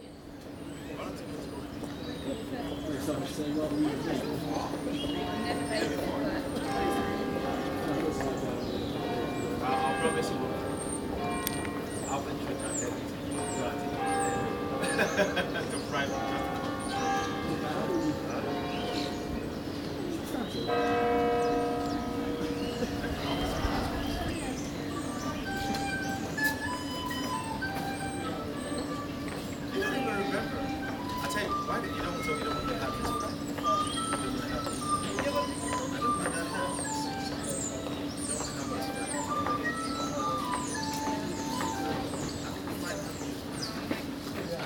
London Borough of Southwark, Greater London, UK - Southwark Cathedral Courtyard
Short recording of the general atmosphere around Southwark Cathedral on a relatively quiet day.
1 February, 12:59